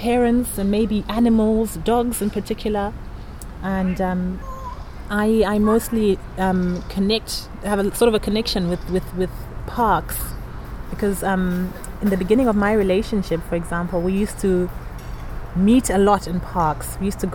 We are with Yvonne Chipo Makopa and her little son Connor in the “Hallohpark” in Bockum-Hovel; the area where the park raises up steeply. The wind blows strongly through the old trees around the playground. Yvonne is a busy young woman, commuting every day to a neighbouring town for work and study, is married and has a four-year-old son and, still finds time getting into a lot of extra work as the Secretary of the local African club “Yes Afrika”. Yvonne is originally from Zimbabwe and came to Germany years back on a scholarship as an exchange student. Hear her “love song” to parks, and to her neighbourhood park in particular…
for Yes Afrika e.V. see: